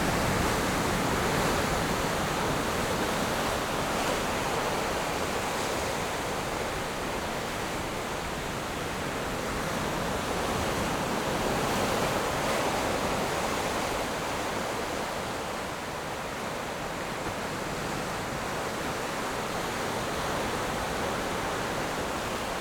頭城鎮石城里, Yilan County - Standing on the banks
Standing on the banks, Coastal, Sound of the waves
Zoom H6 MS mic+ Rode NT4